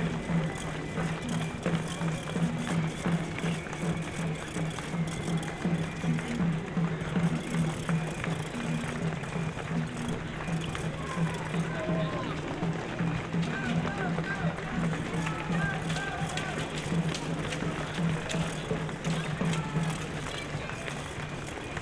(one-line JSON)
{"title": "kottbusser damm, schönleinstr. - berlin marathon 2011, steps", "date": "2011-09-25 10:30:00", "description": "runners on kottbuser damm, berlin", "latitude": "52.49", "longitude": "13.42", "altitude": "40", "timezone": "Europe/Berlin"}